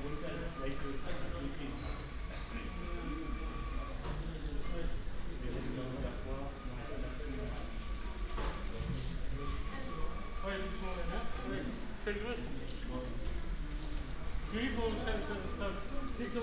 The whole U-Bahn trip from Rathaus Neuköln to Alexanderplatz. Binaural recording.
Germany, 22 October 2010